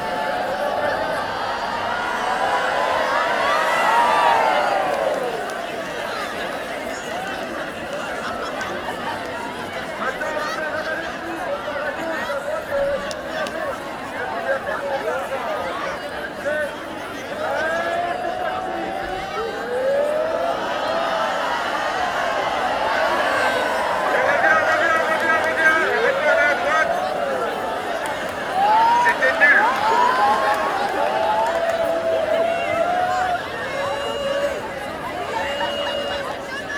Centre, Ottignies-Louvain-la-Neuve, Belgique - A film called tomorrow
The 750 students of St-Jean Baptist college in Wavre went to see a film called "tomorrow", for a sustainable development. On the main place of this city, they make an "holaa" dedicate to the planet.